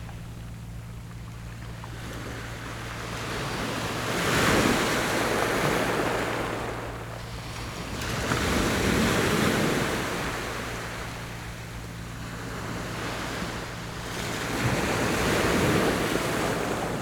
Su'ao Township, Yilan County - Sound of the waves
Sound of the waves, In the coastal
Zoom H6 MS+ Rode NT4